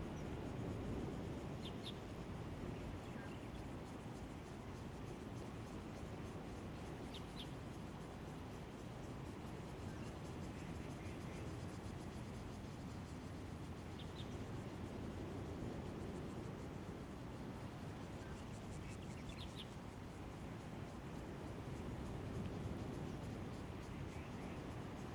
富山村, Beinan Township - Birds and waves
birds, Sound of the waves, The weather is very hot
Zoom H2n MS +XY